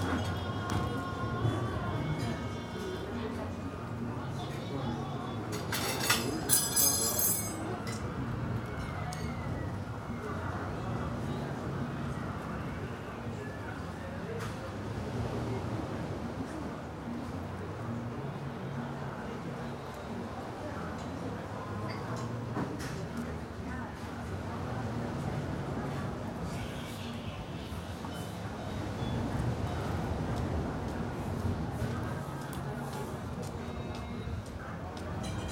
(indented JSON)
{
  "title": "Spain, Cadaqués, Passeig - Passeig",
  "date": "2009-10-08 22:31:00",
  "description": "This recording is some acoustic hybrid of a kind i like very much. On the left: the lively background noise of a restaurant. On the right: much less obstrusive sounds of a movie on TV from a flat. In front: arising from time to time, the backwash of waves on the beach, the deep presence of nature.",
  "latitude": "42.29",
  "longitude": "3.28",
  "altitude": "10",
  "timezone": "Europe/Madrid"
}